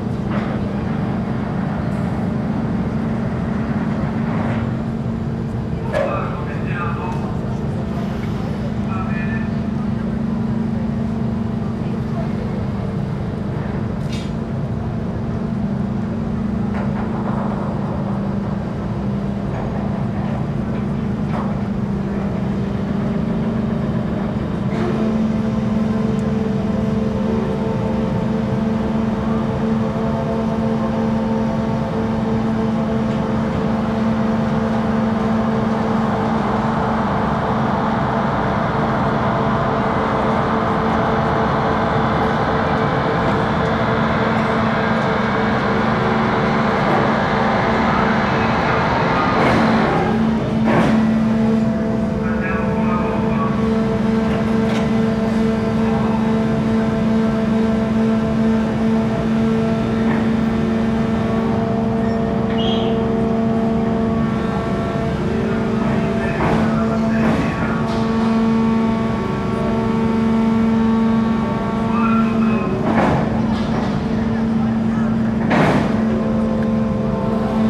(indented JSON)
{
  "date": "2011-09-06 08:55:00",
  "description": "From Trapani to Favignana Island on the Simone Martini boat.",
  "latitude": "38.01",
  "longitude": "12.51",
  "altitude": "6",
  "timezone": "Europe/Rome"
}